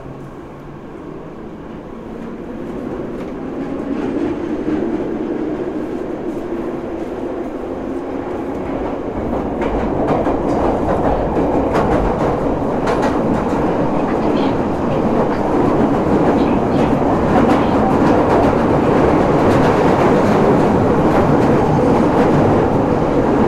Pimlico Underground train to Vauxhall
Travelling on London Underground train from Pimlico to Vauxhall Station
London, UK, 4 February 2010